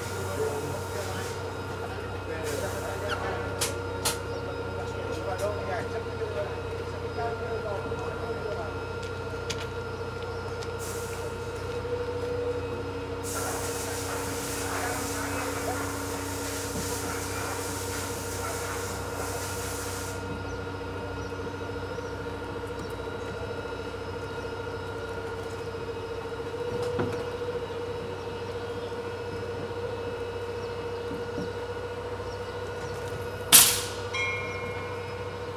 Spain, Sóller, Ferrocarril de Sóller - Vents ferroviaires / Rail winds (3)
Departure in station.